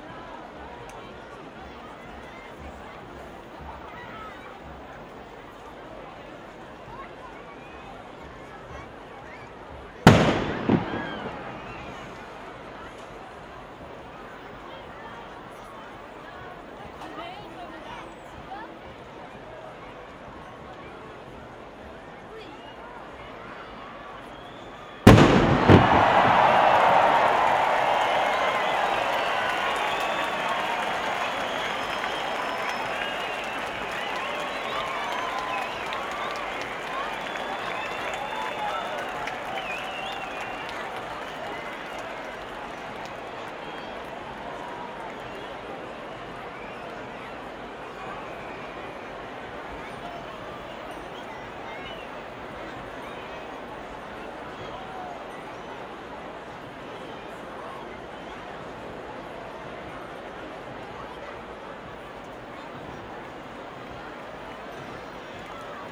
Zürich, Bellevue, Schweiz - Böllerschüsse
Die Zünfte treffen bei dem Sechseläuteplatz ein. Volk, Böllerschüsse.
Sechseläuten ist ein Feuerbrauch und Frühlingsfest in Zürich, das jährlich Mitte oder Ende April stattfindet. Im Mittelpunkt des Feuerbrauchs steht der Böögg, ein mit Holzwolle und Knallkörpern gefüllter künstlicher Schneemann, der den Winter symbolisiert.
Zürich, Switzerland, 18 April 2005, ~18:00